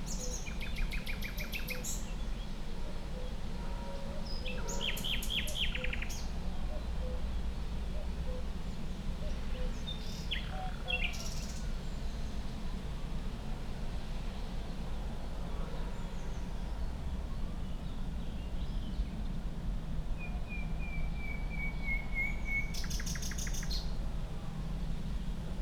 in the fields direction Chorzów, allotment garden, a nightingale, a chuckoo, wind and various anthropogenic sounds of unclear origin
(Sony PCM D50, DPA4060)
22 May 2019, 09:50